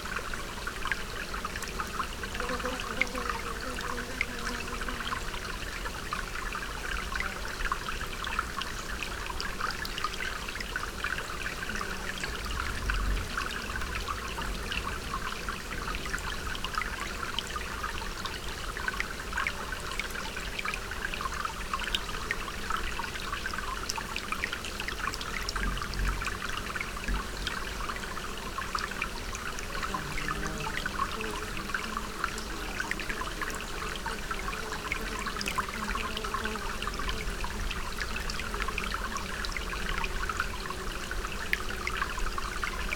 SBG, Salts del Rec de la Tuta - Torrente
Tarde calurosa en el torrente. Varias libélulas sobrevuelan su curso de arriba abajo, produciendo un leve zumbido al cruzar.
St Bartomeu del Grau, Spain, 14 August